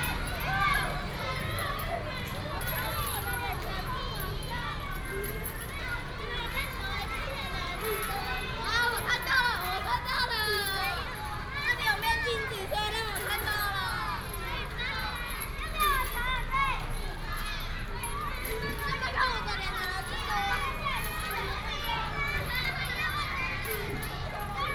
Class break time, Primary school students
Taichung City, Taiwan, 22 March 2017